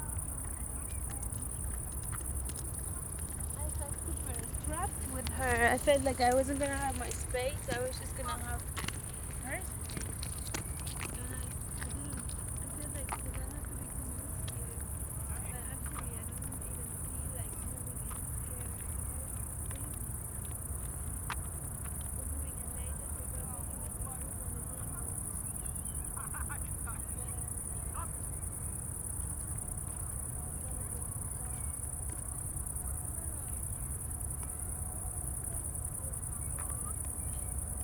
crickets in the meadows, beetween the two runways, the area has been a bird sanctuary for a while, even when the airport was in operation. nowadays, people seem to respect it.
(SD702 DPA4060)